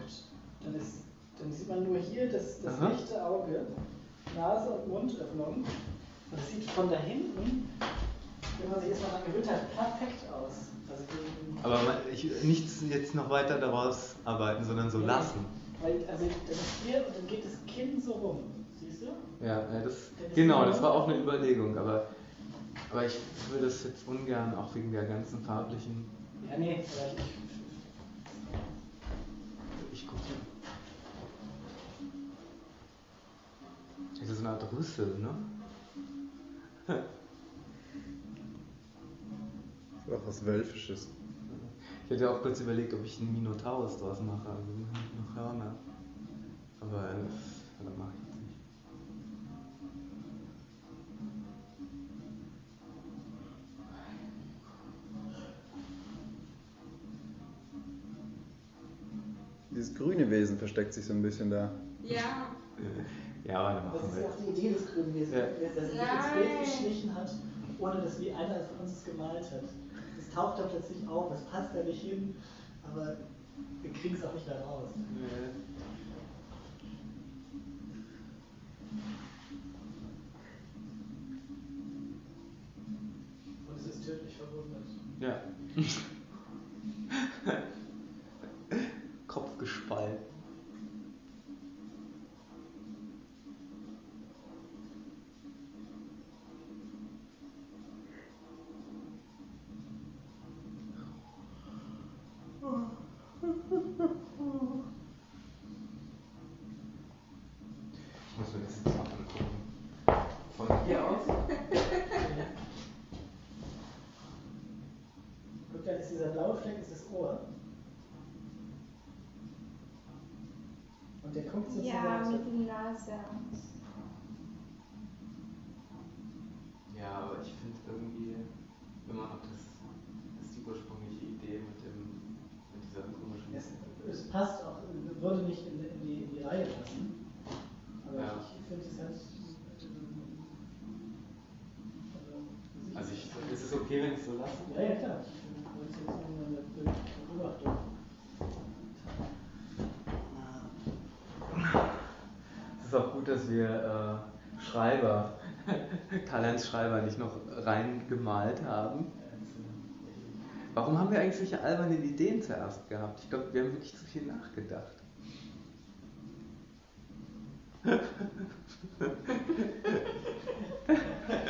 Ein Bild macht durch, Der Kanal, Weisestr. 59
Die Maler während der Entstehungsphase des Triptychons, Freitag nacht, im Kanal, Prozessgalerie.
Deutschland, European Union